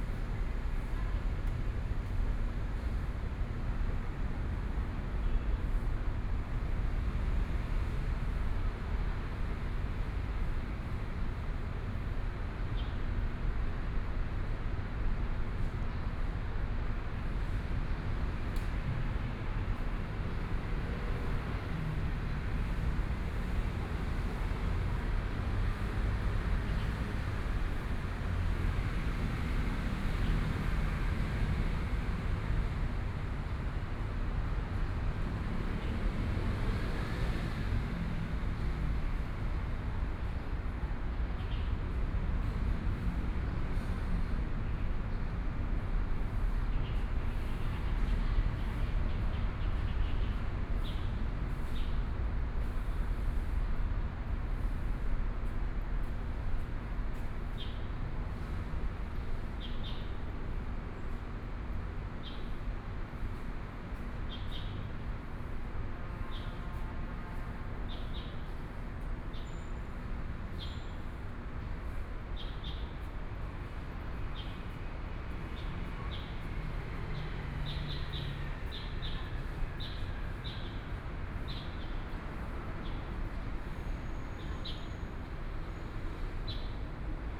JinBei Park, Taipei City - Morning in the park
Morning in the park, Traffic Sound, Environmental sounds, Birdsong
Binaural recordings
Taipei City, Taiwan